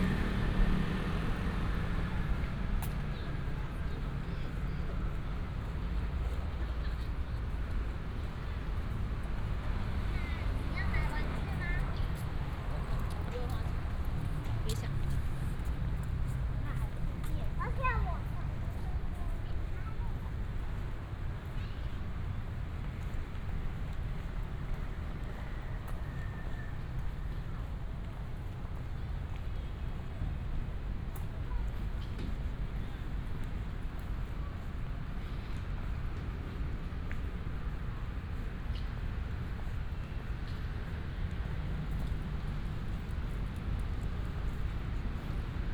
三信公園, Xitun Dist., Taichung City - walking in the Park
walking in the Park, Traffic sound, tennis court